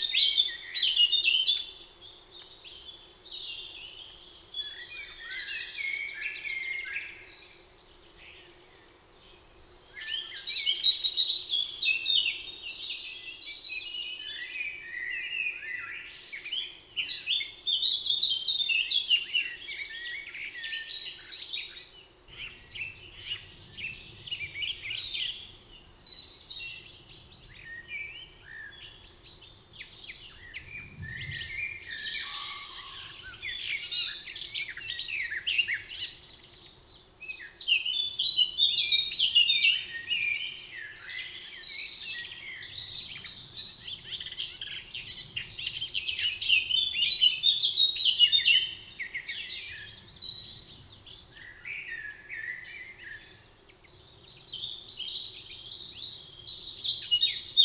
Court-St.-Étienne, Belgique - The forest
Recording of the birds in the forest. About the birds, I listed, with french name and english name :
Rouge-gorge - Common robin
Merle noir - Common blackbird
Pouillot véloce - Common chiffchaff
Pigeon ramier - Common Wood Pigeon
Mésange bleue - Eurasian Blue Tit
Mésange charbonnière - Great Tit
Corneille noire - Carrion Crow
Faisan - Common Pheasant
Pic Epeiche - Great Spotted Woodpecker
Fauvette à tête noire - Eurasian Blackcap
And again very much painful planes.
18 May 2017, 13:00